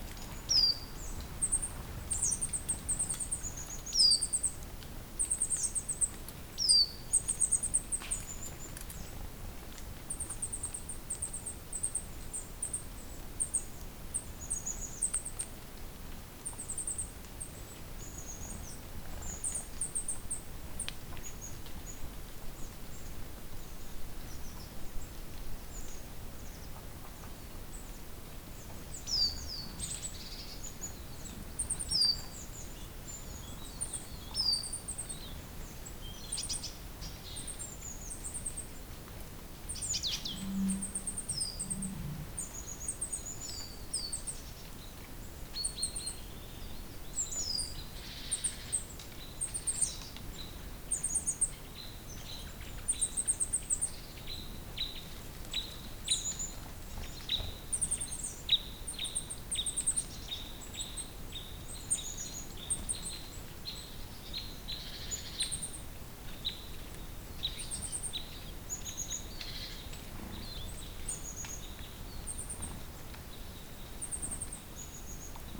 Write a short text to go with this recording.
Soundclip capturing bordsongs, birds flying over on the edge of forest. Moisture retained by trees condensates and drips down on fallen leaves. Cold, little bit windy morning at Veporské vrchy mountain range in central Slovakia.